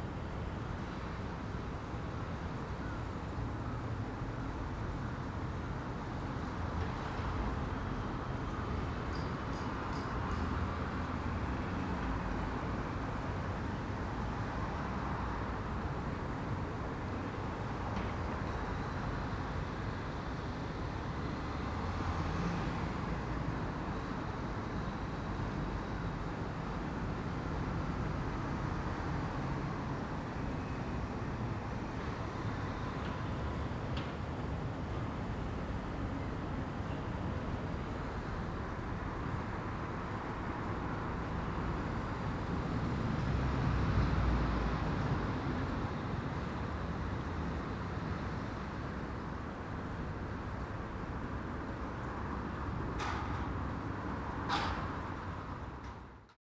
High Street St Nicholas Avenue
People come to the machine
to pay for parking
Roofers unload ladders
and climb onto the roof
one appears above the roofline
standing on the flat roof of a loft extension
Two runners go/come
from the terraced houses behind me
A flock of racing pigeons flies overhead
England, United Kingdom, 18 January, 10:25